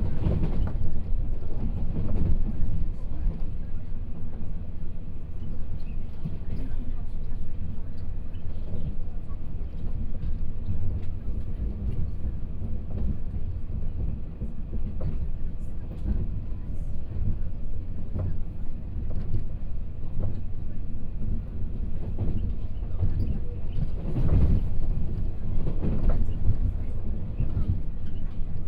Luye Township, Taitung County - Taroko Express
Interior of the train, from Shanli Station to Ruiyuan Station, Binaural recordings, Zoom H4n+ Soundman OKM II